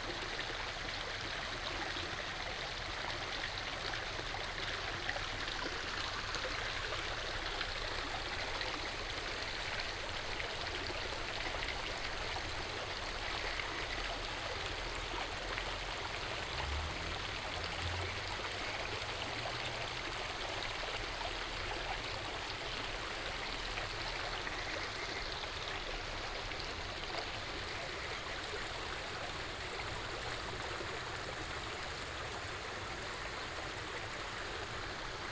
中路坑溪, 埔里鎮桃米里, Taiwan - Stream
Stream sound, birds sound
Puli Township, Nantou County, Taiwan, 16 September, ~11:00